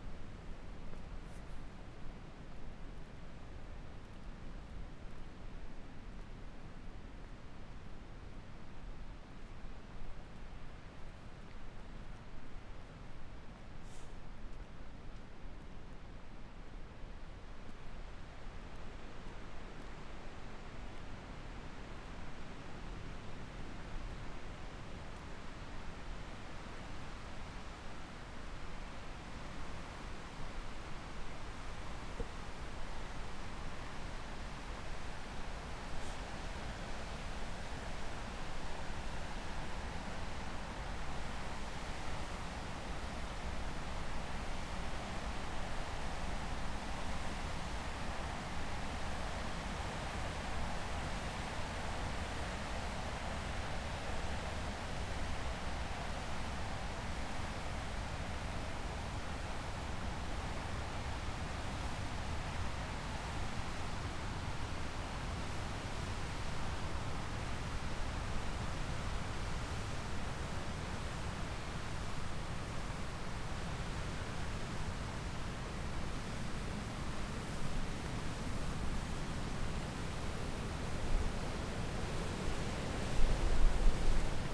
Willow River State Park - New Dam - Walking to Willow River Dam
Walking from the parking lot to the top of the New Willow River Dam and then walking down to the river
23 March 2022, 12:27